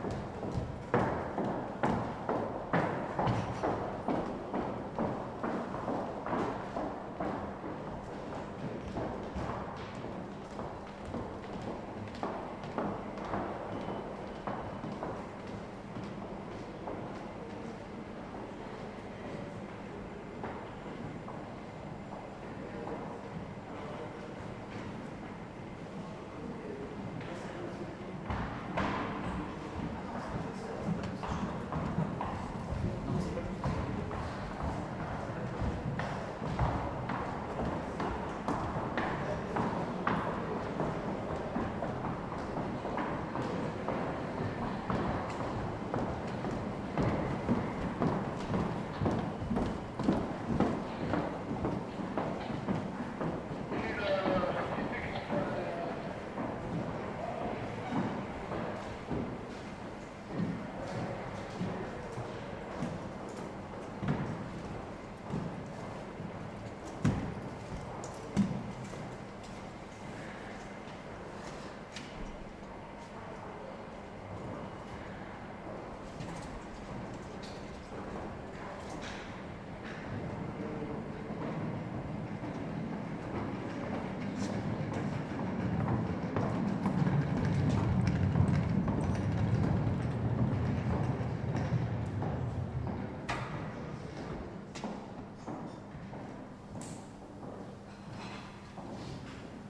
docks de jolliette marseille
enregistré sur nagra ares bb lors du tournage vieilles canaille